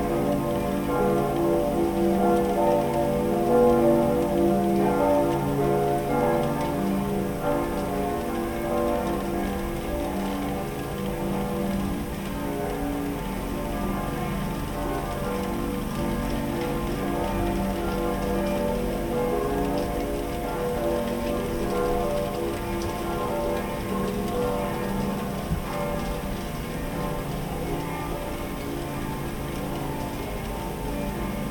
Christmas Church Bells, Icy Sharp Rain, Pigeons on the Roof & The City Sounds - IN THE ATTIC DURING THE CHRISTMAS DAY
During this Christmas Day I wanted to record the Christmas Church Bells and the Rain simultaneously! I was very lucky today & I made a great "AMBISONICS RECORDING" of the Christmas Church Bells, Icy Sharp Rain, Pigeons and Sounds From The Streets. A nice long session of Christmas Church Bells (ca. 10 mins) made a great atmosphere recording. 1km distant Church Bells sounded very nice today, maybe also because of the Icy & Sharp Rain!
24 December 2021, 14:24